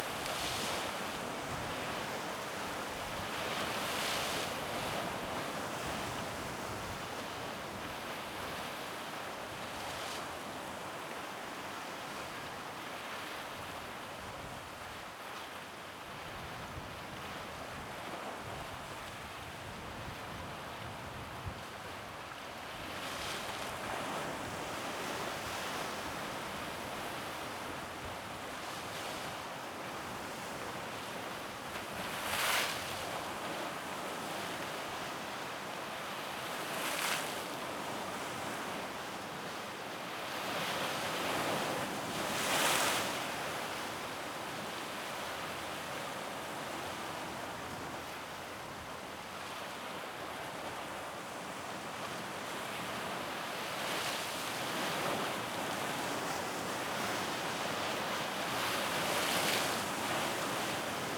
Cape D’Aguilar, Cape D’Aguilar Rd, Shek O, Hong Kong - Cape D’Aguilar
Cape D'Aguilar is a cape on southeastern Hong Kong Island. It is named after Major-General George Charles D'Aguilar. You can listen to the seashores hitting the rocks at the cape.
鶴咀是香港島東南端的一個海角，其命名取自曾任駐港英軍總司令和香港第一任副總督的德己立爵士（Sir George Charles D'Aguilar）。你可以聽到海浪持續拍打岩石的聲音。
#Waves, #Seashores, #Ocean, #Sea, #Water